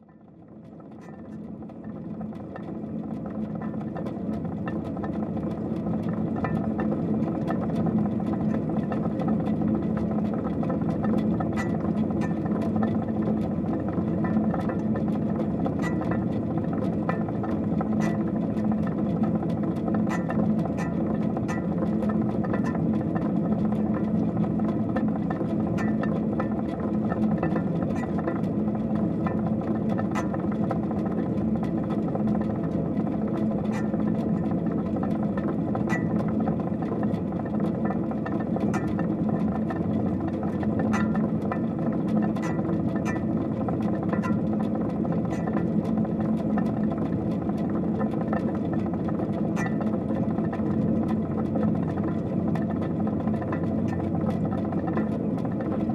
Differdange, Luxembourg - A mine fan
In an underground mine, a very big fan (diameter 3 meters) naturally turning with air.